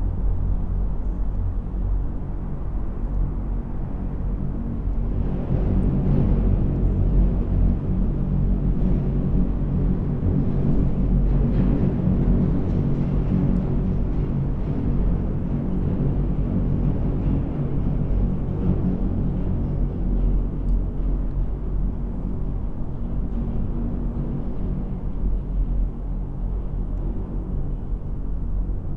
Vila Franca de Xira, Portugal - tube resonance
recorded with the microphone inside a ventilation tube while the train passes by. Recorder: M-Audio Microtrack + Canford Audio stereo preamp + hypercardioid AKG blue line mic.
8 April